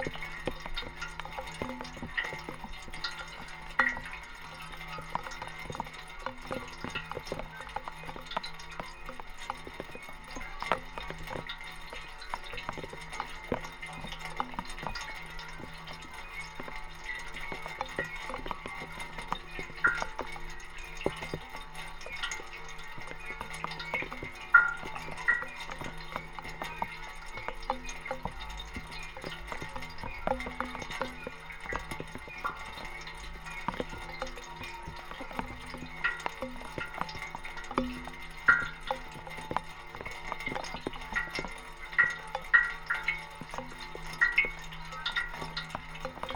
{"title": "Františkánská zahrada, Prague - fountain", "date": "2012-10-02 16:20:00", "description": "fountain at Františkánská zahrada, Prague, recorded with a pair of contact microphones, during the Sounds of Europe radio spaces workshop.", "latitude": "50.08", "longitude": "14.42", "altitude": "207", "timezone": "Europe/Prague"}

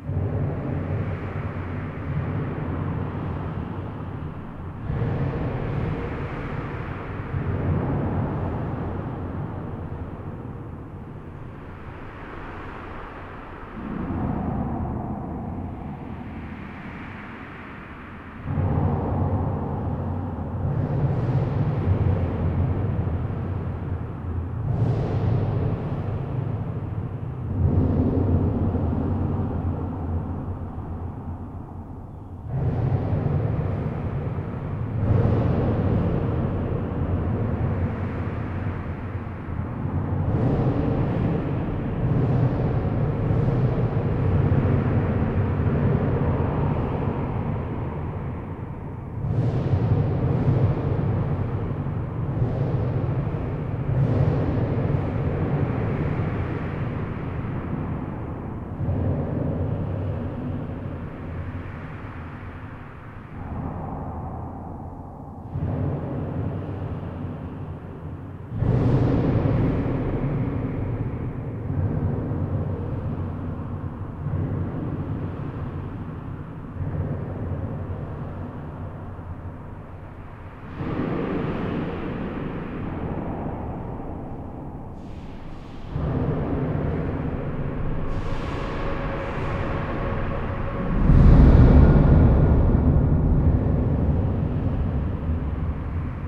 {"title": "Theux, Belgium - Inside the bridge, welcome to hell", "date": "2018-06-22 20:45:00", "description": "Recording of the technical tunnel of the Polleur bridge : I'm not on the motorway but below, not on the bridge but inside. Reverb makes very noisy and unpleasant low-pitched explosions. It's a foretaste of hell, in particular with trucks shelling. 8:35 mn, will we survive to the truck ? This bridge is entirely made of steel and it's just about my favorite places. Let's go to die now, bombing raid hang over.", "latitude": "50.53", "longitude": "5.88", "altitude": "219", "timezone": "Europe/Brussels"}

